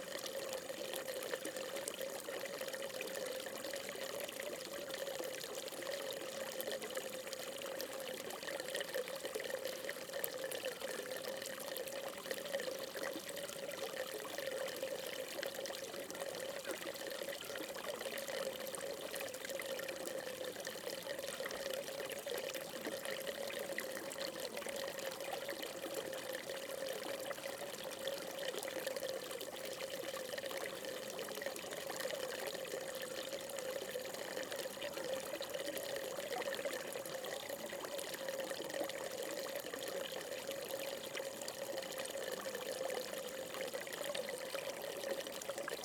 대한민국 서울특별시 서초구 서초동 141-5 - Daesung Buddhist Temple
Daesung Buddhist Temple, a fountain.
대성사, 약수터